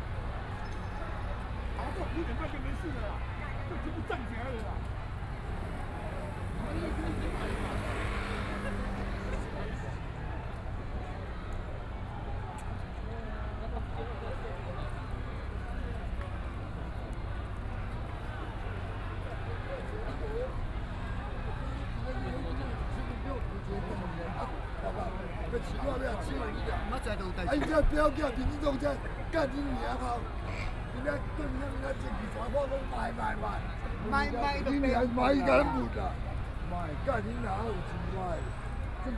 government dispatched police to deal with students, Students sit-in protest, Students do not have any weapons, tools, Occupied Executive Yuan
Riot police in violent protests expelled students, All people with a strong jet of water rushed, Riot police used tear gas to attack people and students
Binaural recordings, Sony PCM D100 + Soundman OKM II
Section, Zhōngxiào West Rd, 41號米迪卡數位有限公司, 24 March 2014, 04:45